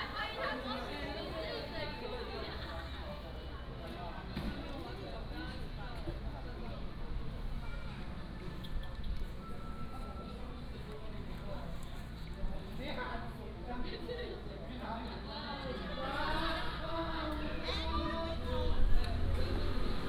陳氏宗祠, Jincheng Township - In front of the traditional architecture
In front of the traditional architecture, Traffic Sound
金門縣 (Kinmen), 福建省, Mainland - Taiwan Border, 2 November